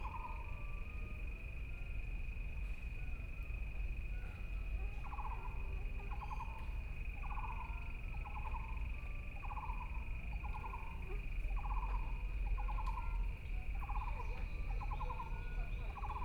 Frogs sound, Insects sound, Birdsong, Dogs barking, Traffic Sound